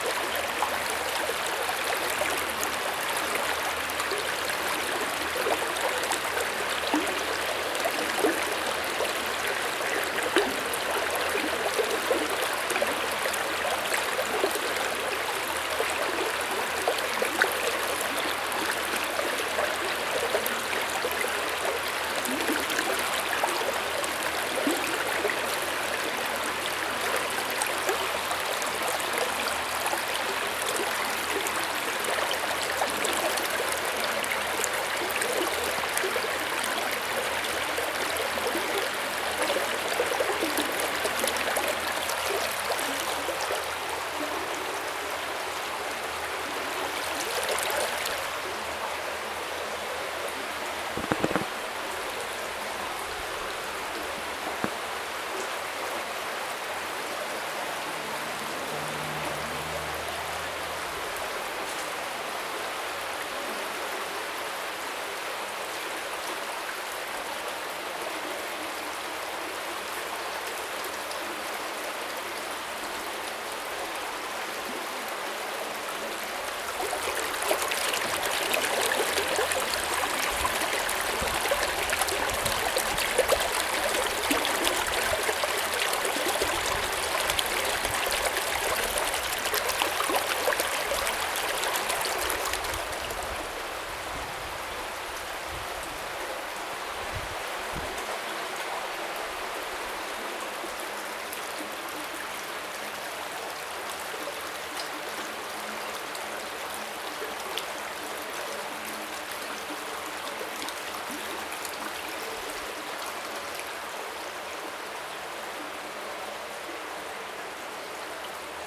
Water stream at the Jerusalem Botanical Gardens